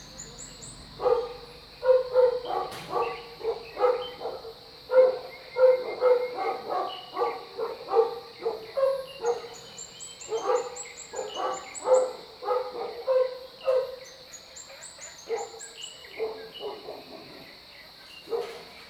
Crowing sounds, Bird calls, Frogs chirping, Early morning
Zoom H2n MS+XY
Nantou County, Taiwan